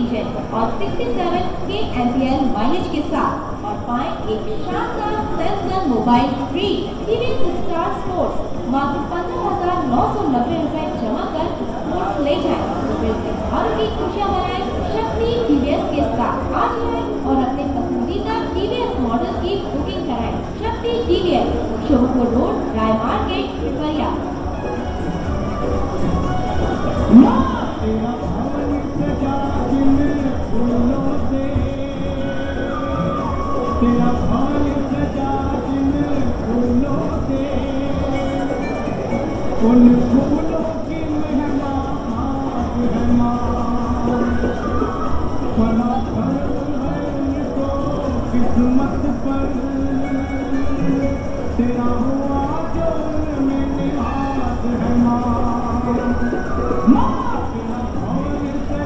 Pipariya, Madhya Pradesh, Inde - From the platform at the train station